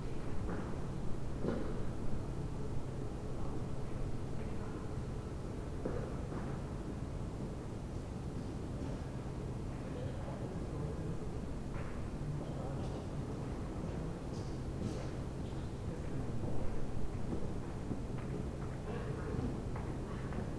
Berlin: Vermessungspunkt Friedel- / Pflügerstraße - Klangvermessung Kreuzkölln ::: 28.03.2012 ::: 00:39